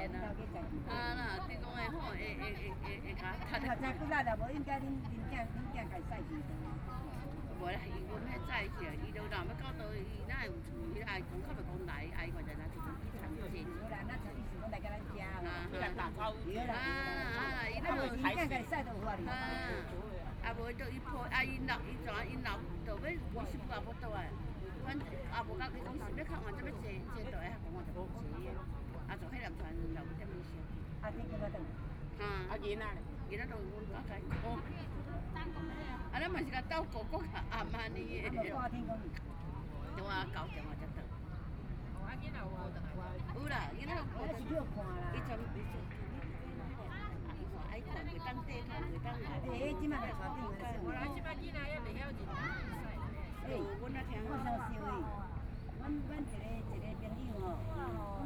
Bihu Park, Taipei City - Chat between elderly
Sitting in the park, Chat between elderly
Binaural recordings